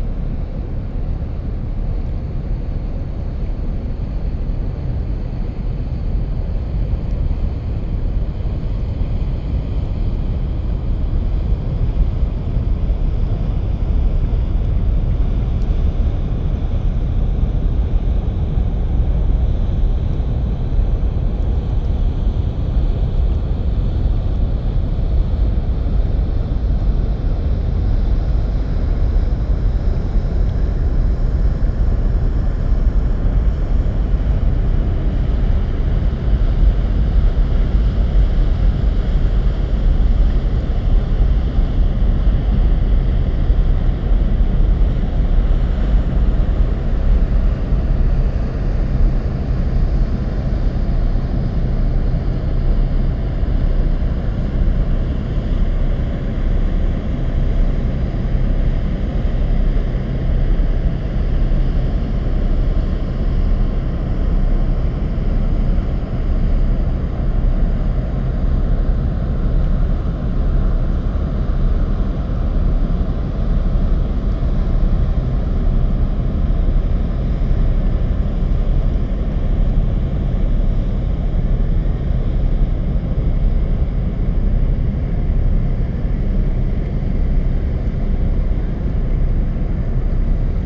Rheinufer, Köln, Deutschland - ship traffic
late summer evening at the Rhein river bank, cargo ships passing, deep drones of the engines.
(LS5, Primo EM172)
Cologne, Germany, 10 September